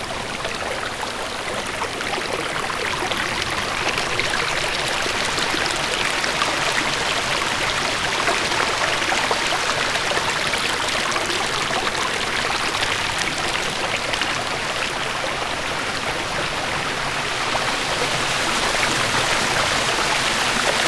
studenice, slovenia - at the concreet barrier
Poljčane, Slovenia, 27 July 2014